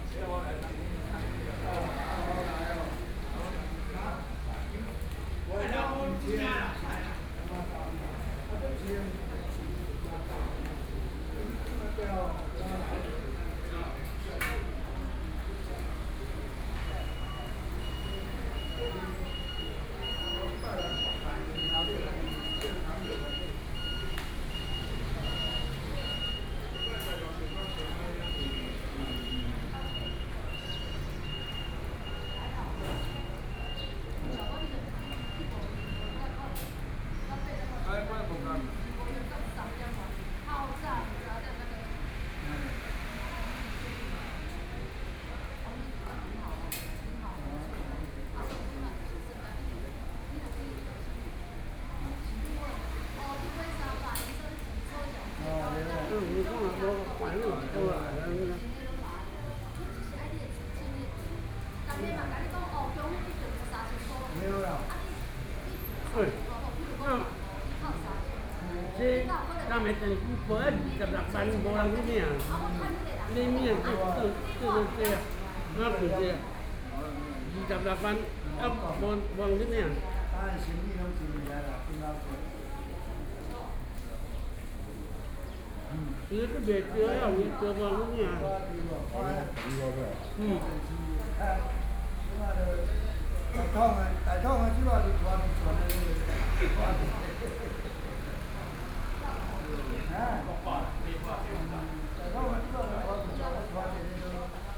Nanfang-ao, Yilan county - In the temple
In the temple, 're Chatting while eating old people, Zoom H4n+ Soundman OKM II
Suao Township, 陽明巷39-43號, 7 November 2013